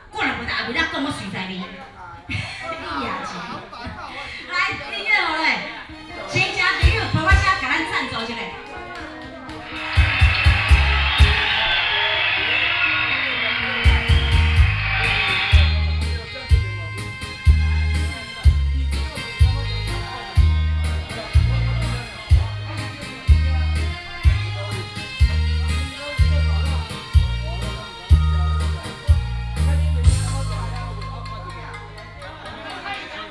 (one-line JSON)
{"title": "清泉里保安宮, Shalu Dist., Taichung City - Community party", "date": "2017-10-09 20:12:00", "description": "In the temple, Traffic sound, Firecrackers and fireworks, Community party, Binaural recordings, Sony PCM D100+ Soundman OKM II", "latitude": "24.24", "longitude": "120.61", "altitude": "201", "timezone": "Asia/Taipei"}